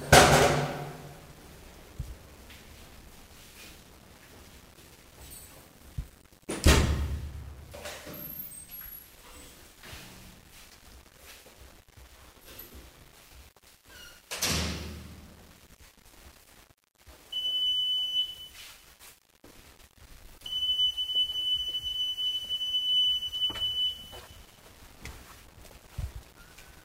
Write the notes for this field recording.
enregistré lors du tournage fleur de sel darnaud selignac france tv